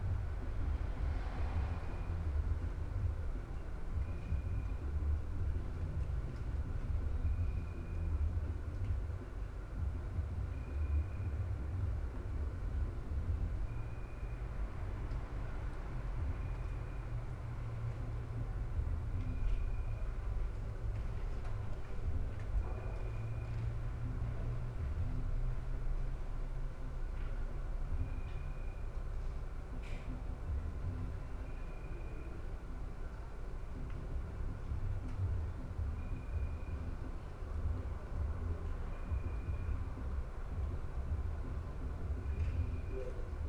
Mladinska, Maribor, Slovenia - late night october cricket, radio